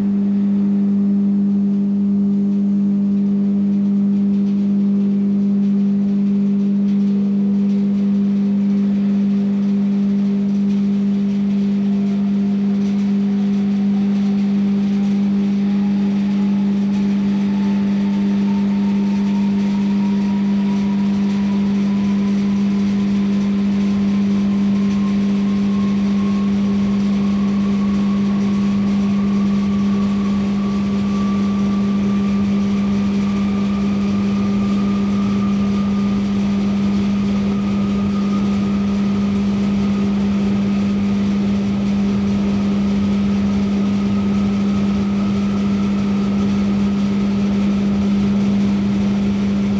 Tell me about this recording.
Fachgebiet Bionik und Evolutionstechnik, Technische Universität Berlin, Ackerstraße, Berlin - Great wind tunnel spinning up and down. The department for bionics and evolutionary technology of Technische Universität Berlin is located in the former AEG building. You can hear the great wind tunnel spinning up and down. Many thanks to Dipl.-Ing. Michael Stache for his kind admission. [I used an MD recorder with binaural microphones Soundman OKM II AVPOP A3]